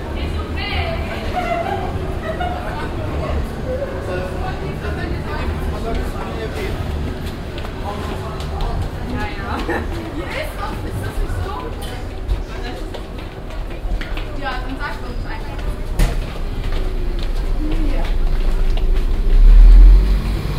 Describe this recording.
soundmap: köln/ nrw, rolltreppeneinfahrt und gang durch die neumarkt unterführung, passage nachmittags, rolltreppenauffahrt platz mitte, project: social ambiences/ listen to the people - in & outdoor nearfield recordings